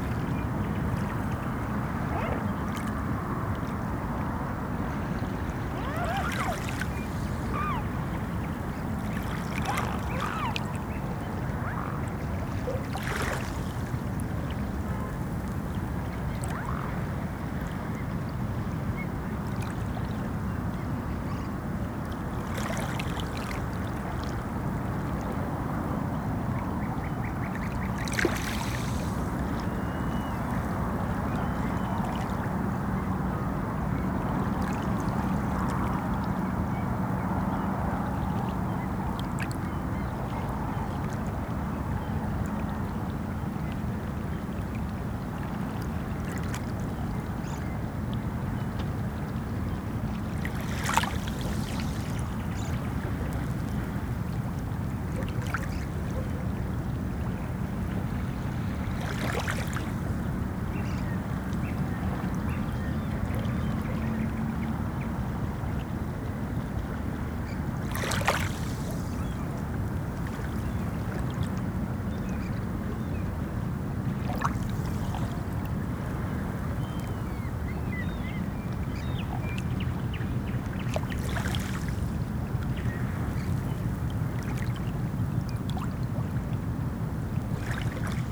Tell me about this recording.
Swans and noise from harbour in the evening